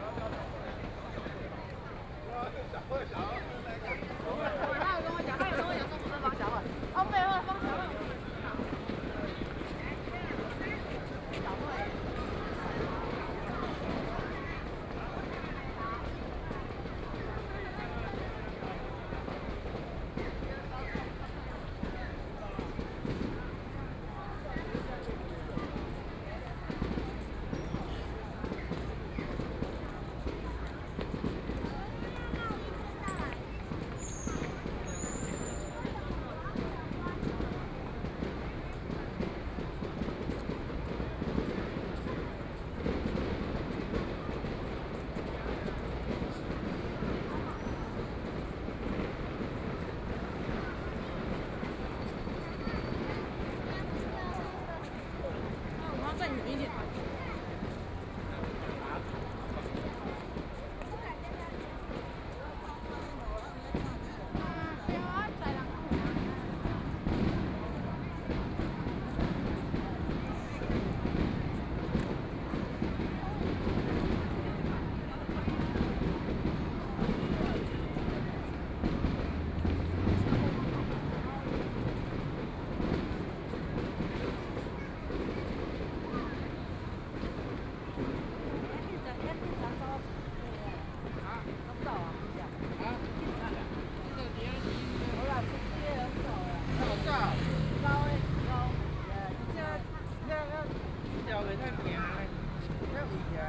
內湖區湖濱里, Taipei City - Fireworks sound
Very many people in the park, Distance came the sound of fireworks, Traffic Sound
Please turn up the volume a little. Binaural recordings, Sony PCM D100+ Soundman OKM II